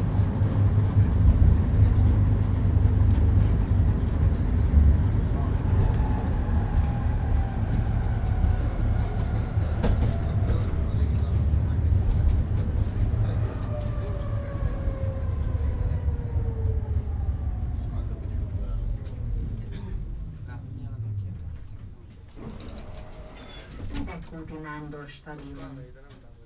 Budapest, Arena, Hungary - (81 BI) Tram ride
Binaural recording of a tram ride from Arena to Könyves Kálmán körút.
Recorded with Soundman OKM on Zoom H2n.